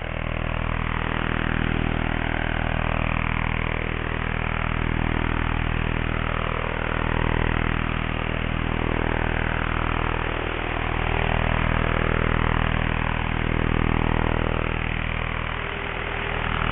Puerto Percy, Magallanes y la Antártica Chilena, Chile - storm log - radiostorm highwire I
radiostorm, statics 22.0003MHz, Nooelec SDR + upconverter at highwire (looped 5 times)
This is part of a series of recordings, shifting to another frequency spectrum. Found structures, mainly old cattle fences and unused telephone lines are used as long wire antennas wit a HF balun and a NESDR SMArt SDR + Ham It Up Nano HF/MF/NF upconverter.
Provincia de Tierra del Fuego, Región de Magallanes y de la Antártica Chilena, Chile, 17 February 2021